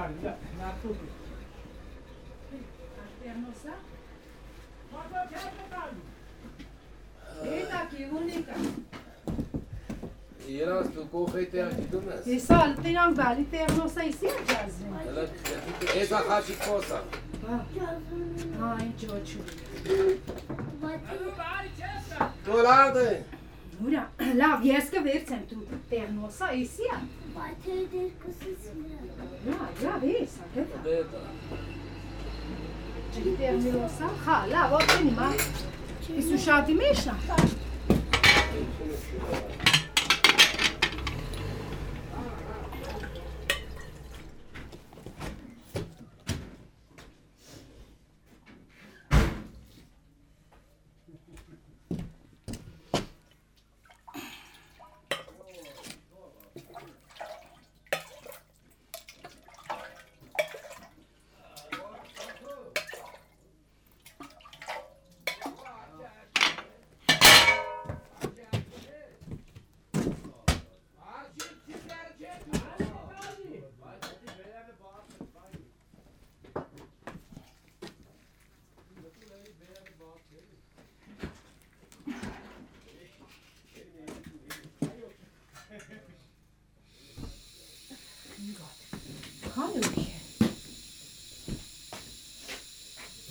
Kotayk, Arménie - Sharing yogurt in the yurt
While walking near the volcanoes, some farmers went to see us and said : come into the tent during a few minutes. They are extremely poor, but welcomed us, and gave a very strong yogurt called tan, and the coffee called sourj. This recording is the time we spent in the tent. It's the simple sound of their life in mountains.